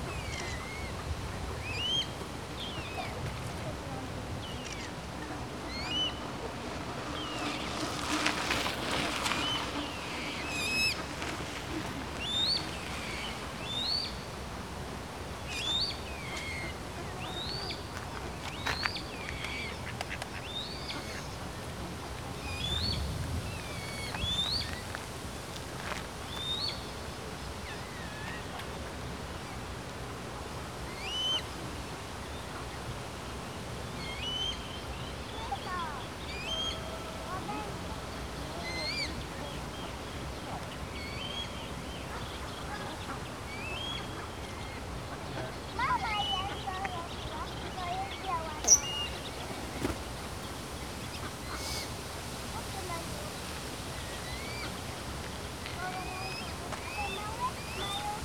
Morasko, close to Campus UAM, Moraskie ponds - swan with cygnets
a pond swarmed with different birds. swan with a few cygnets, ducks and their young, pigeons basking and fighting with each other on a cobbled bank. other bird spices i wasn't able to recognize, acting hostile towards other birds and chasing it away. a few Sunday strollers taking pictures and relaxing at the pond.
Poznan, Poland